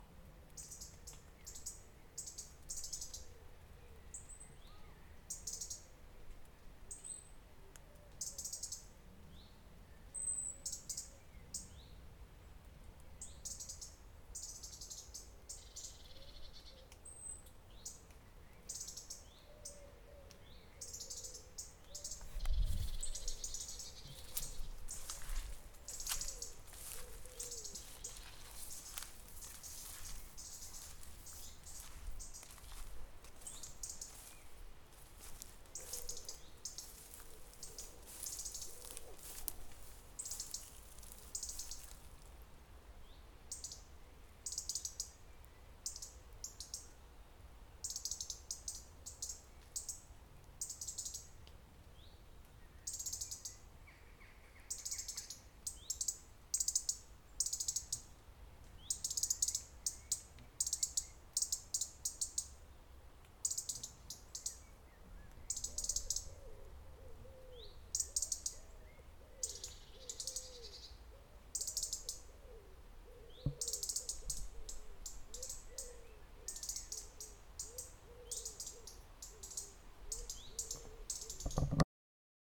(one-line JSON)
{"title": "Foxley Woods, Foxley, Dereham, UK - Birds in Foxley Woods by Ali Houiellebecq", "date": "2020-06-17 12:00:00", "description": "Walking through some dry grass to listen to birds in the trees during a warm, sunny day in June during the Covid-19 Lockdown in Norfolk in the UK. Recording made by sound artist Ali Houiellebecq.", "latitude": "52.76", "longitude": "1.04", "altitude": "55", "timezone": "Europe/London"}